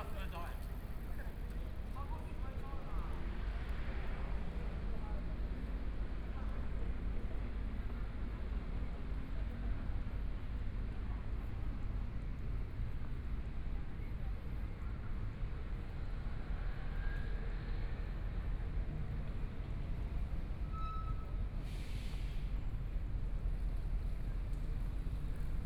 Taipei EXPO Park, Zhongshan District - Walking through the Park
Walking through the Park, Helicopter flight traveling through, Traffic Sound, Binaural recordings, Zoom H4n + Soundman OKM II
Taipei City, Taiwan, 20 January 2014